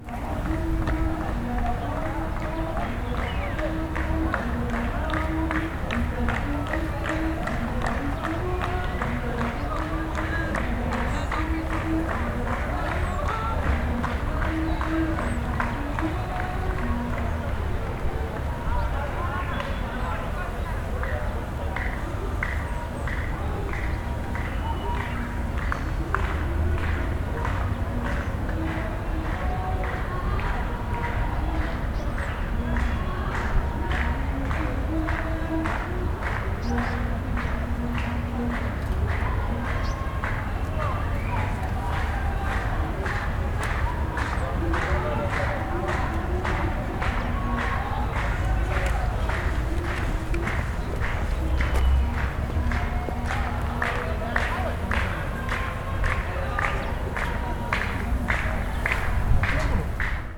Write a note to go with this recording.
Ils sont une vingtaine de femmes et d'hommes. Ils suivent de jeunes mariés, chantent et frappent dans leurs mains. Je suis loin de la scène. Le son capté est donc de basse qualité.